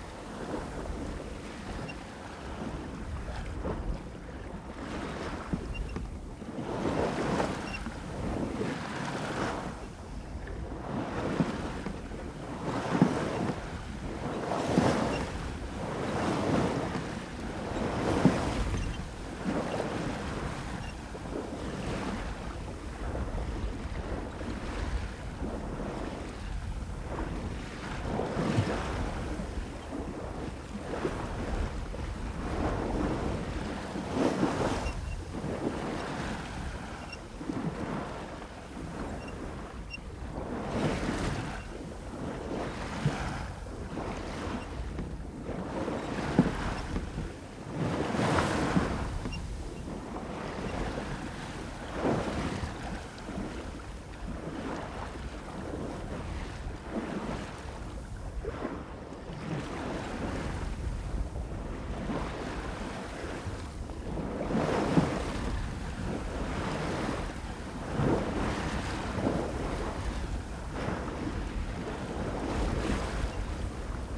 Plouézec, France - Navigation voilier - 23.04.22
Navigation en voilier au large de Paimpol. Allure du prêt, mer relativement calme. Enregistré avec un coupe ORTF de Sennheiser MKH40 coiffées de Rycote Baby Ball Windjammer et d'une Sound Devices MixPre3.